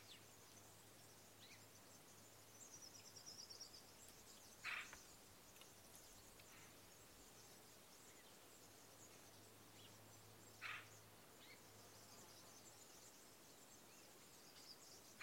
Birds in the Provence
In the countryside near Lourmarin.